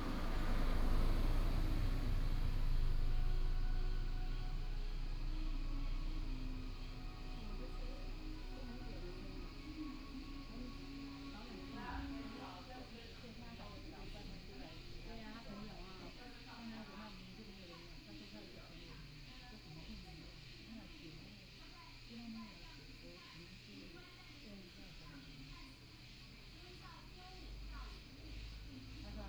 Vintage shop, Cicadas sound, r, Traffic sound, Tourists chatting under the tree, Binaural recordings, Sony PCM D100+ Soundman OKM II
Emei Township, Hsinchu County, Taiwan